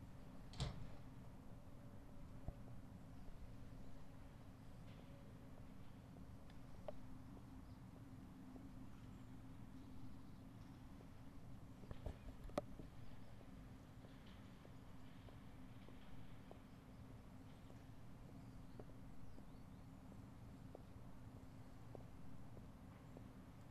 auf einem Parkweg des Campus' der Donau-Universität Krems, vor der ersten Vorlesung.
28 January 2013, 08:20